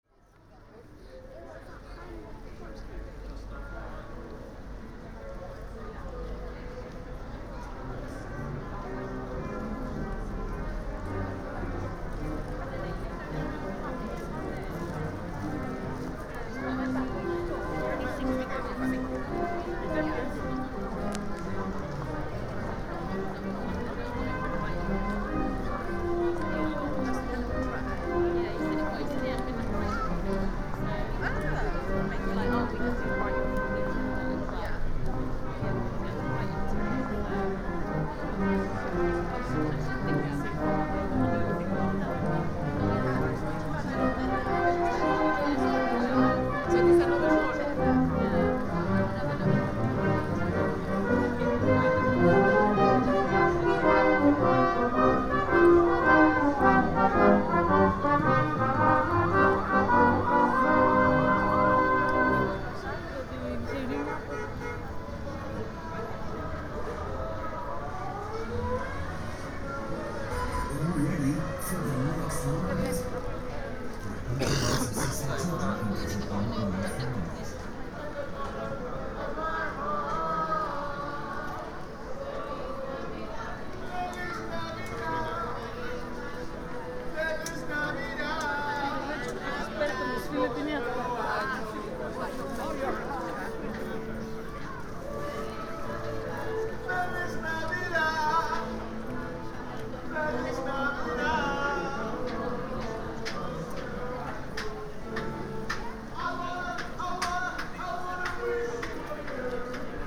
Broad Street, Reading, UK - Christmas on Broad Street Soundwalk (West to East)
A short soundwalk from the pedestrianised section of Broad Street in Reading from west to east, passing the Salvation Army band, buskers, small PAs on pop-up stalls and RASPO steel pan orchestra. Binaural recording using Soundman OKM Classics and windscreen 'ear-muffs' with a Tascam DR-05 portable recorder.